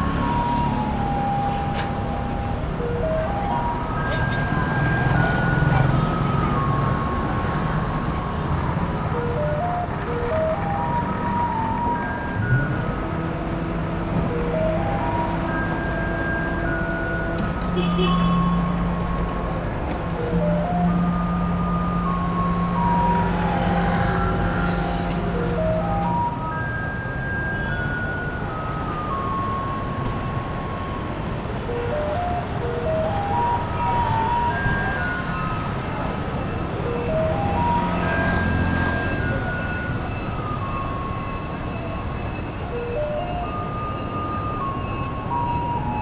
Yanchengpu, Kaohsiung - Garbage truck arrived
Garbage truck arrival broadcast music, Sony PCM D50
高雄市 (Kaohsiung City), 中華民國, April 2012